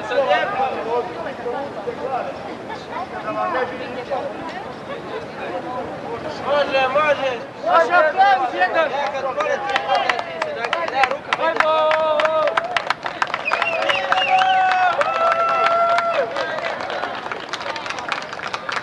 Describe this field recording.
August 2004. Recorded on a compact cassette and a big tape recorder. During the Bosnia civil war, the Ottoman bridge called Stari Most was destroyed. It was rebuilt and finished in july 2004. I went back to Bosnia and especially to Mostar. There's a old tradition : people are jumping in the river Drina, to proof they would be a good husband. It's a 29 meters high jump. It's very impressive. Here is an old recording of a guy jumping into the river Drina. It's an old recall of Bosnia.